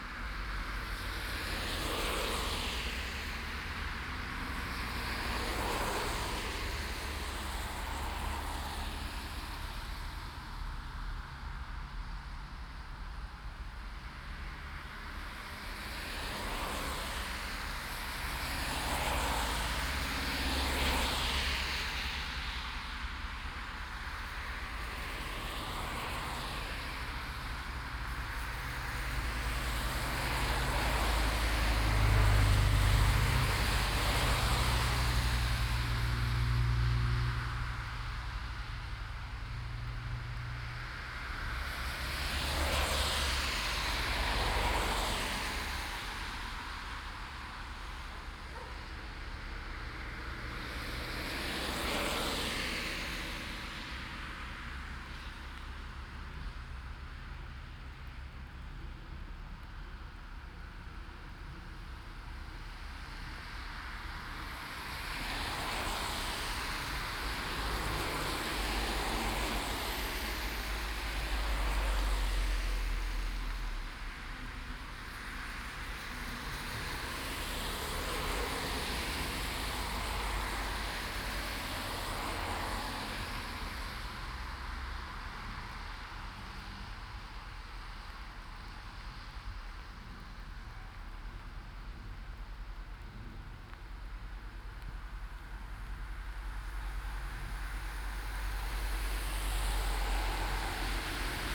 berlin, schwarzer kanal, in front - berlin schwarzer kanal, in front
traffic, binaural, recorded for the quEAR soundart festival 2011